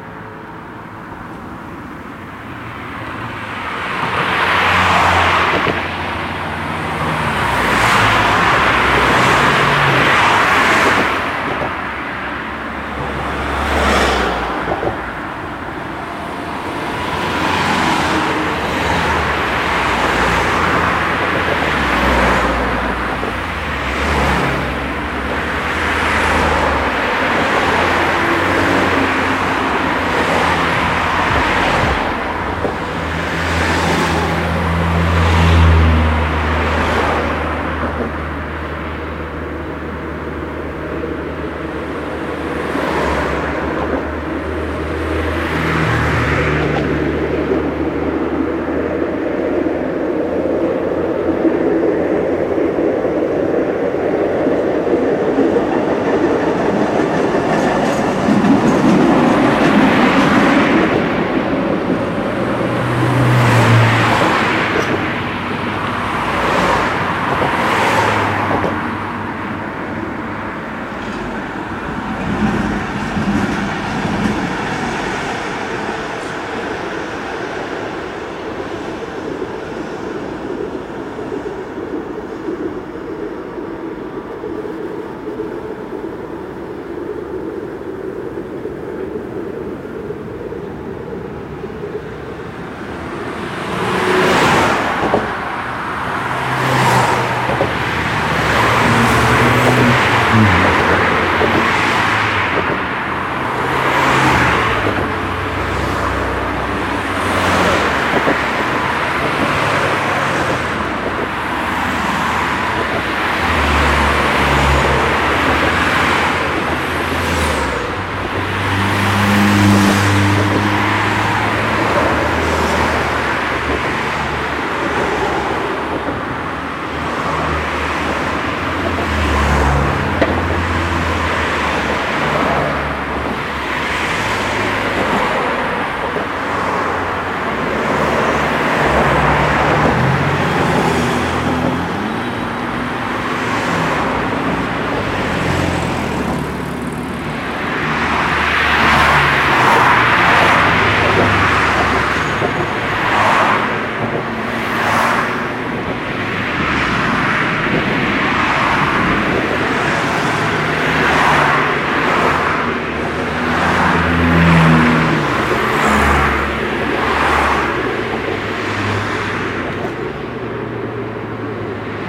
cars(each crossing a metal cover on the way) and a tram passing by in opposite direction; micro held low, at ground level